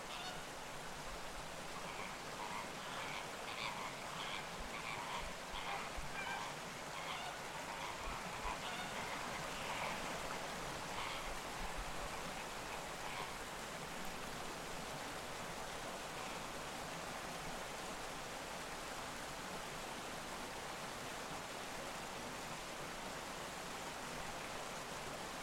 Březinovy sady, Jihlava, Česko - plameňáci v noci
zoo pozdě večer, občas se ozve nějaká šelma, ale hlavně plameňáci nemůžou usnout